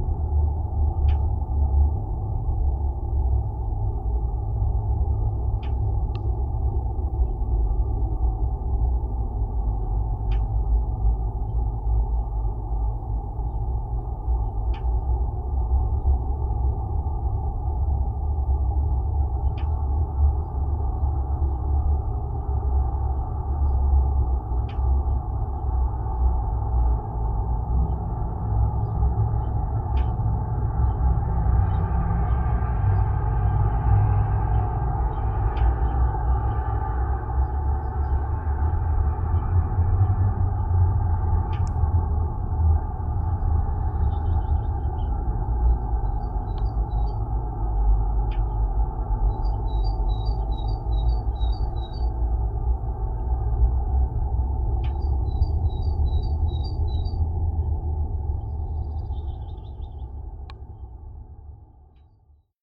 Rytmečio g., Karkiškės, Lithuania - Water tower ladder drone
Dual contact microphone recording of the metal ladder of a water tower. Wind, general ambience and occasional traffic sounds are resonating and blending into a low frequency drone.